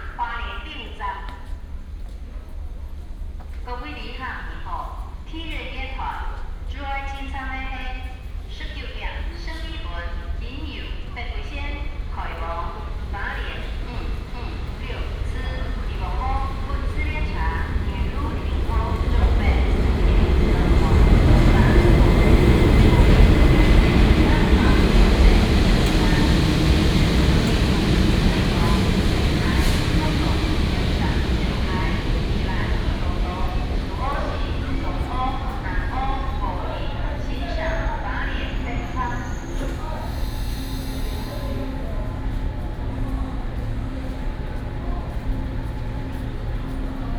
新竹火車站, East Dist., Hsinchu City - in the station platform
in the station platform, Station information broadcast